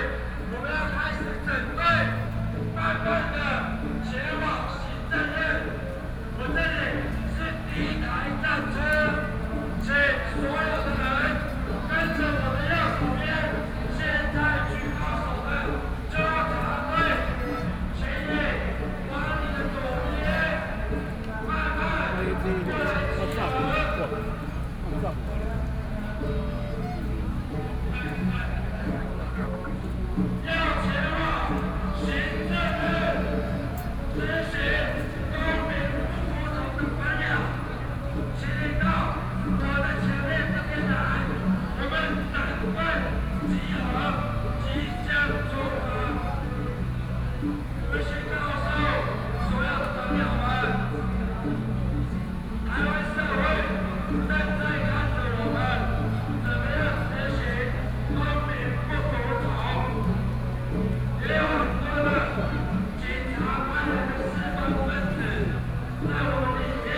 Ketagalan Boulevard, Taipei City - Protest
Protest marchers prepared to move to other government departments, Sony PCM D50 + Soundman OKM II
Zhongzheng District, Taipei City, Taiwan, 18 August 2013